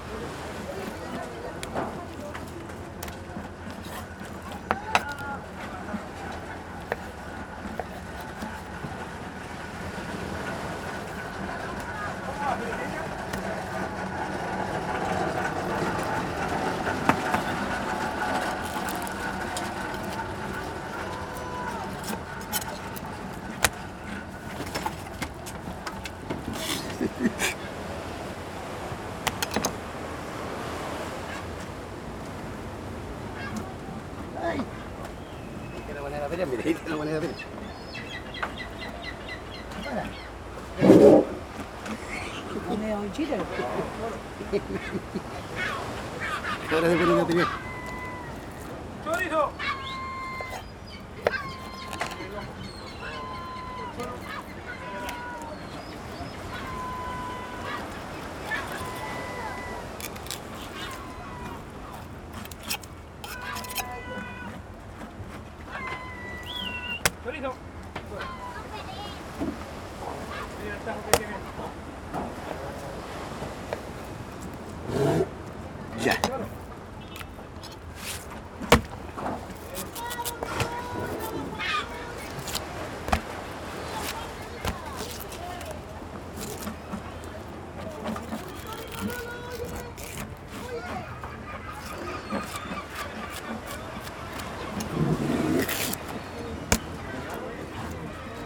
Fish Market, Caleta Portales, Valparaiso (Chile) - Man cutting and cleaning fishes
At the fish market of Caleta Portales (outside), some people are working to clean and cut the fresh fish.
Close recording with some voices and sounds of the market in background, as well as the sea, waves and birds behind.
Recorded by a MS Setup Schoeps CCM41+CCM8
In a Cinela Leonard Windscreen
Sound Devices 302 Mixer and Zoom H1 Recorder
Sound Reference: 151125ZOOM0015
GPS: -33,0307 / -71,5896 (Caleta Portales)
Región de Valparaíso, Chile, 25 November